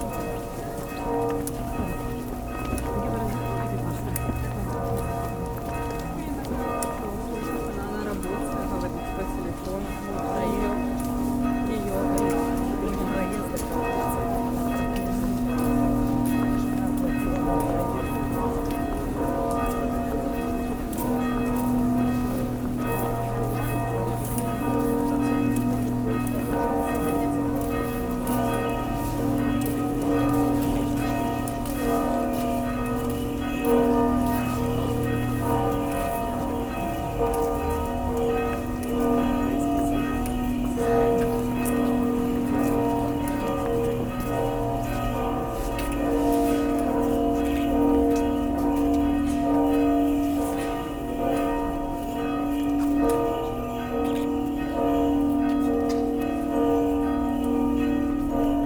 Easter procession around the old town of Ľviv, the former Polish city of Lwów, known elsewhere as Lemberg, in today’s northwestern Ukraine.
Lvivska oblast, Ukraine, 11 April